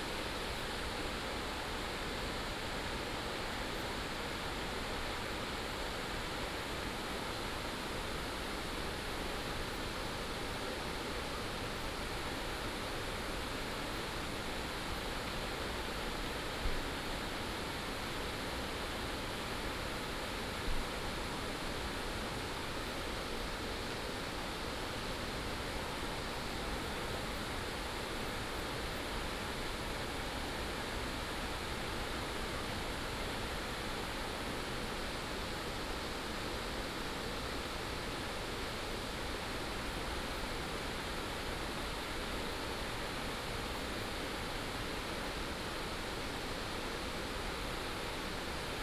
猴洞坑溪, 宜蘭縣礁溪鄉白雲村 - On the bank
Facing streams and waterfalls, On the bank
Yilan County, Jiaoxi Township, 白石腳路199號, 7 December 2016